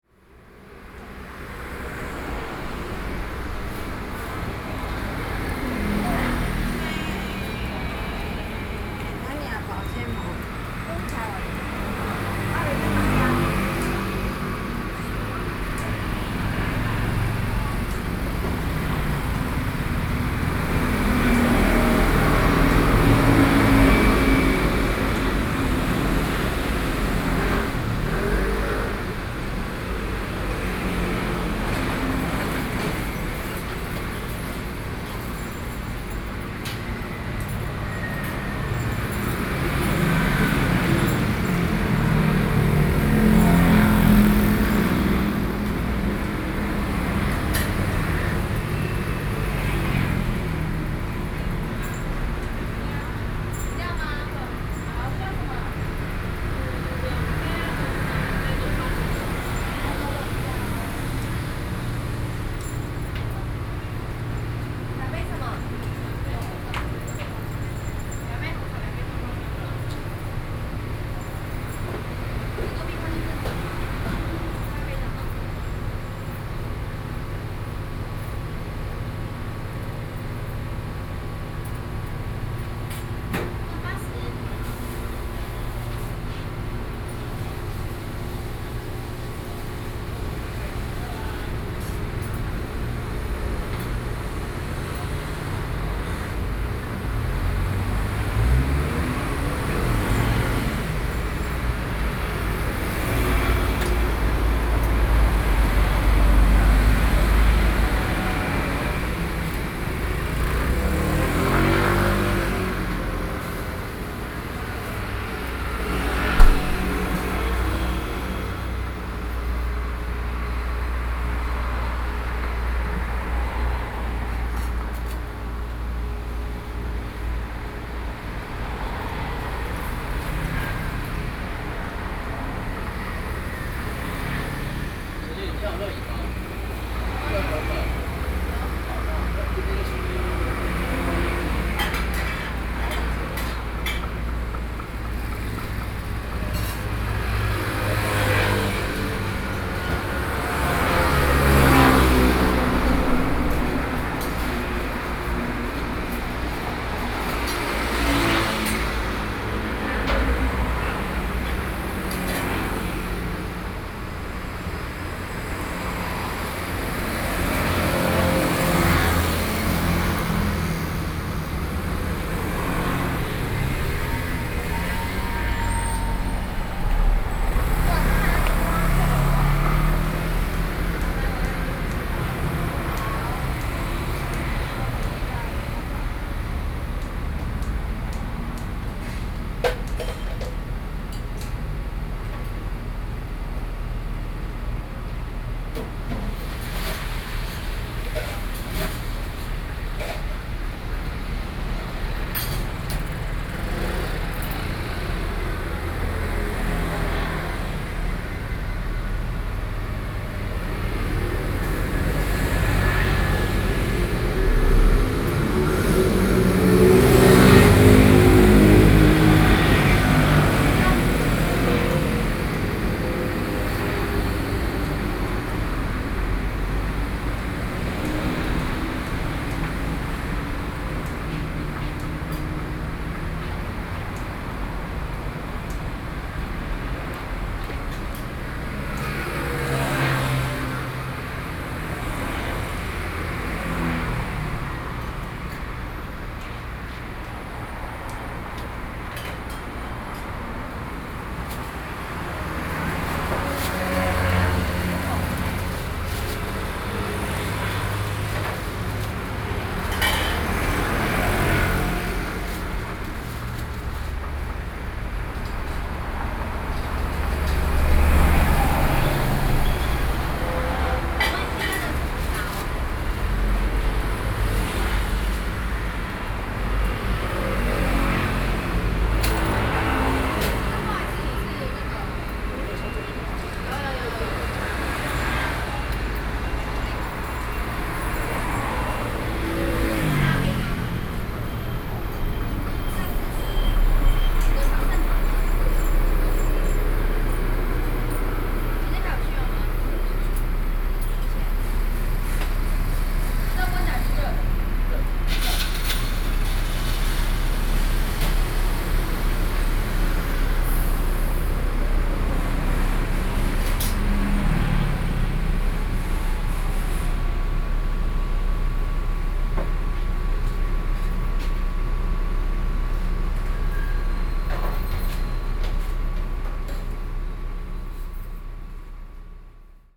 Beitou District - Commuting time street
in front of the Drinks and fried chicken shop, Commuting time street, Sony PCM D50 + Soundman OKM II